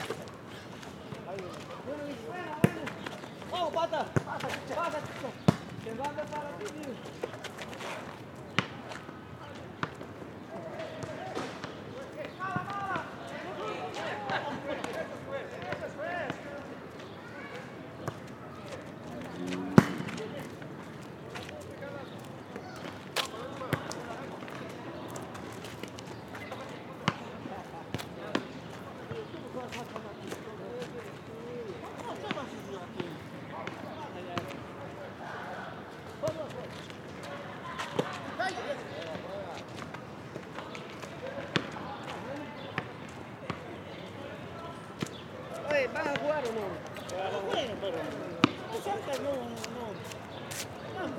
New York, NY, USA - Volleyball match in Evergreen Park, Queens
Sounds from a volleyball match in Evergreen Park, Queens.
United States, 2 May 2022